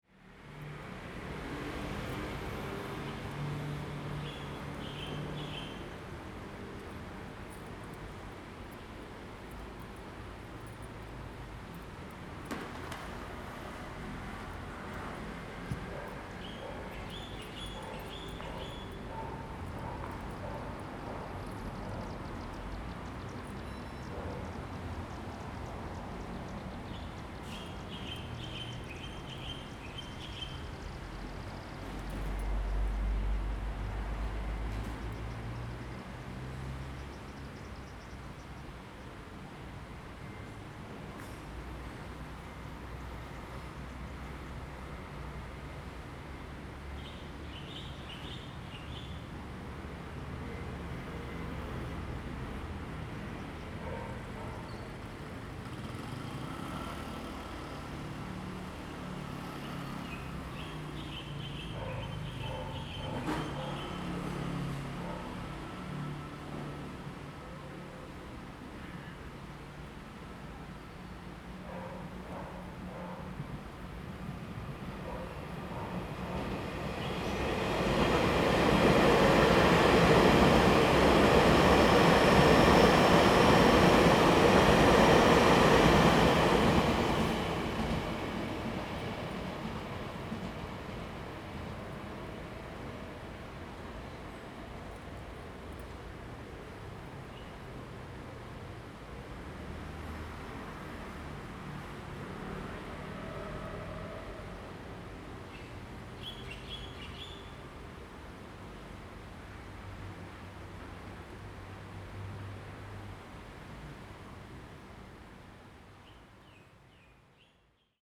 Heping Rd., Hualien City - Under the bridge
Birdsong, Train traveling through
Binaural recordings
Zoom H2n MS+ XY